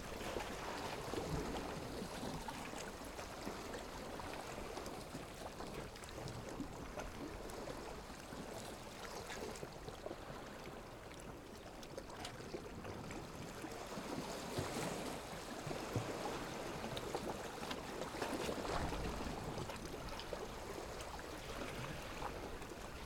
Otranto LE, Italia - quiet water
Really close recording of the sea from the rocks. SETUP was: Rode NT5 stereopair in 180° configuration (really different sounding L-R) - Rode Blimp as wind protection on tripod - Sound Devices 302 - Fostex FR2LE.
Thanks for listening to Nature =)
GiGi d-.-b
Province of Lecce, Italy, 4 March